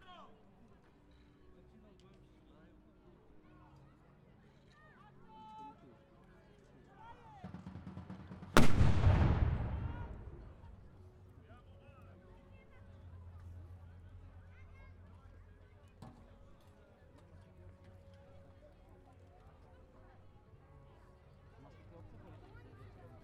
{
  "title": "Lidzbark Warmiński, Bishops Castle, Battle - Napoleon's battle (part 2)",
  "date": "2014-06-07 17:28:00",
  "description": "The biggest battle of Napoleon's east campaigne which took place in Warmia region (former East Preussia).",
  "latitude": "54.13",
  "longitude": "20.58",
  "altitude": "66",
  "timezone": "Europe/Warsaw"
}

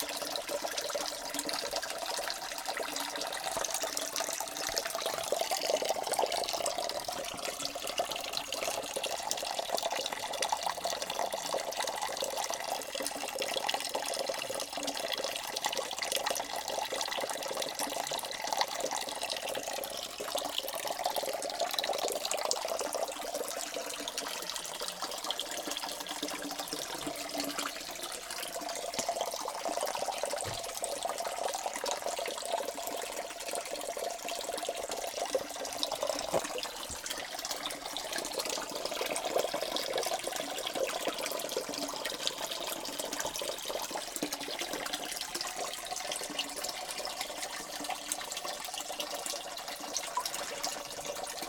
{
  "title": "Unnamed Road, Tinos, Ελλάδα - Natural Water Source at Katapoliani",
  "date": "2018-07-25 16:56:00",
  "description": "This is a Natural Source of Water in green (village square) of Katapoliani, next to the Katapoliani Monastery.\nRecorded with Zoom H2N by the soundscape team of EKPA university of Athens for KINONO Tinos Art Gathering.",
  "latitude": "37.63",
  "longitude": "25.06",
  "altitude": "355",
  "timezone": "Europe/Athens"
}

